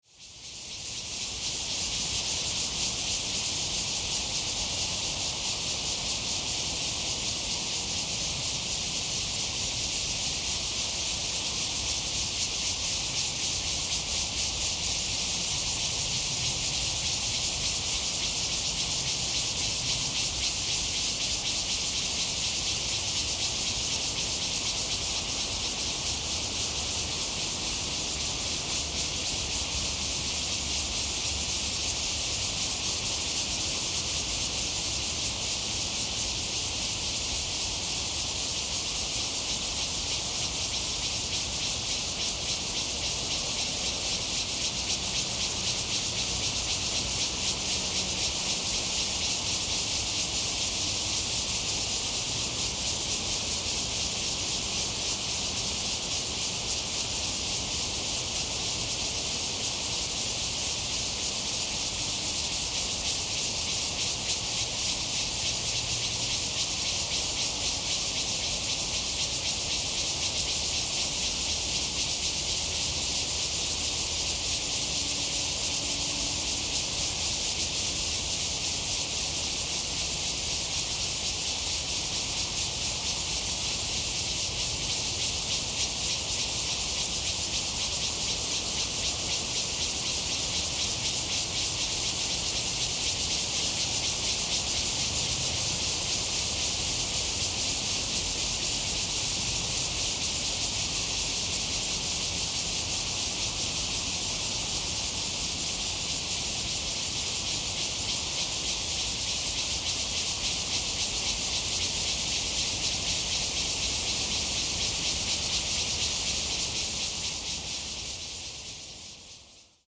Traffic Sound, Cicadas cry
Zoom H2n MS+XY
Taipei City, Da’an District, 台北二號隧道, 17 July 2015